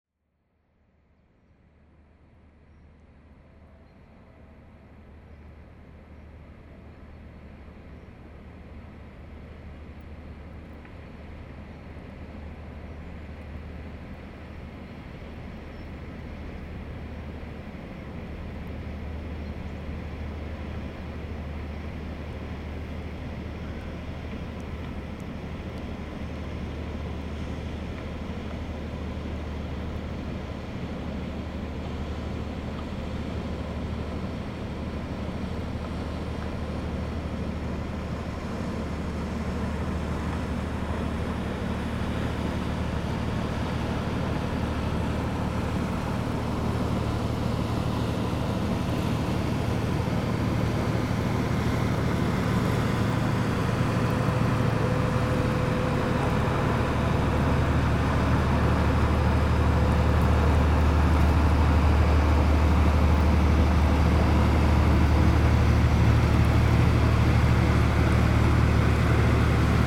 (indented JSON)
{
  "title": "Marolles-sur-Seine, France - Boat",
  "date": "2016-12-28 15:20:00",
  "description": "A small boat called the Tigris is passing by on the Seine river.",
  "latitude": "48.39",
  "longitude": "3.05",
  "altitude": "51",
  "timezone": "GMT+1"
}